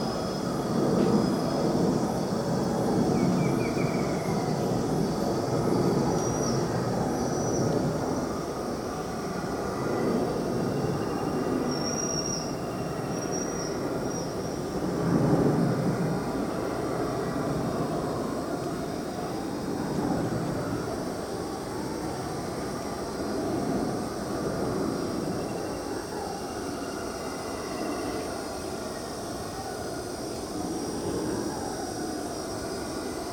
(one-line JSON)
{"title": "Vila Santos, São Paulo - State of São Paulo, Brazil - Howler Monkeys and Cicadas", "date": "2016-12-02 13:36:00", "description": "Howler monkeys at distance and cicadas.", "latitude": "-23.45", "longitude": "-46.64", "altitude": "844", "timezone": "GMT+1"}